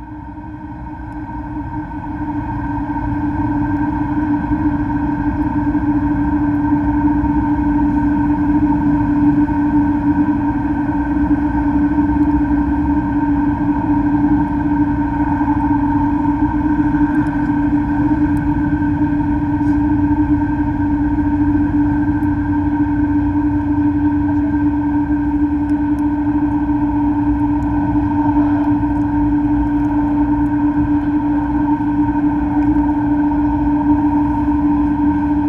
Maribor, Slovenia - one square meter: rusty pipe
a rusty length of pipe, approximately 50cm and open at both ends, lays in the grass near the concrete wall. one omnidirectional microphone is inserted in each end. all recordings on this spot were made within a few square meters' radius.